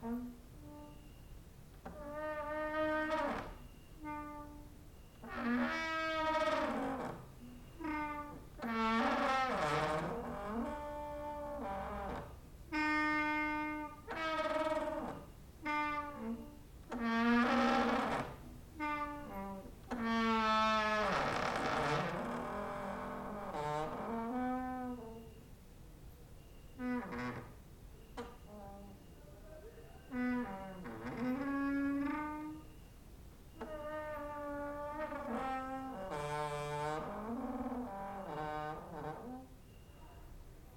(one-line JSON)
{"title": "Mladinska, Maribor, Slovenia - late night creaky lullaby for cricket/9", "date": "2012-08-15 23:55:00", "description": "cricket outside, exercising creaking with wooden doors inside", "latitude": "46.56", "longitude": "15.65", "altitude": "285", "timezone": "Europe/Ljubljana"}